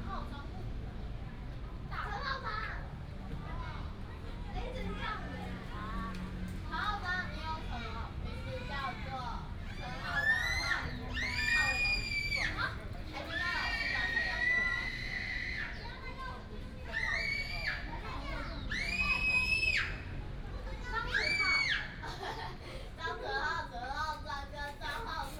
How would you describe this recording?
in the Park, Child, The plane flew through, Traffic sound